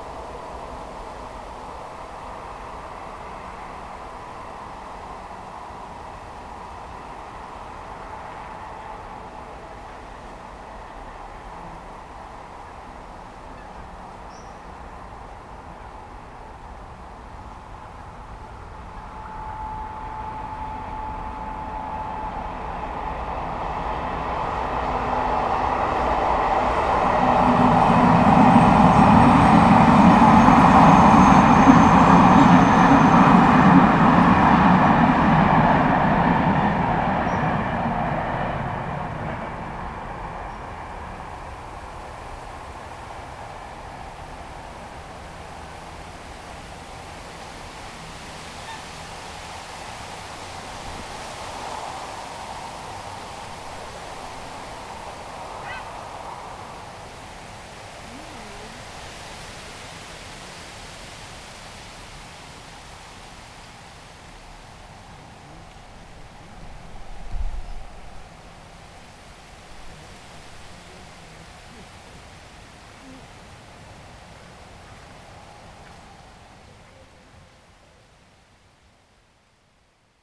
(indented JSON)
{
  "title": "Chem. du Port, Brison-Saint-Innocent, France - Trains en courbe.",
  "date": "2022-10-03 18:10:00",
  "description": "Baie de Grésine près d'une grande courbe du chemin de fer. L'ambiance sonore du lieu a beaucoup changé depuis la fermeture de la RN 991 qui longe le lac pour deux mois de travaux plus aucune voiture. Il reste le vent dans les roseaux quelques oiseaux et les passages de trains.",
  "latitude": "45.73",
  "longitude": "5.89",
  "altitude": "240",
  "timezone": "Europe/Paris"
}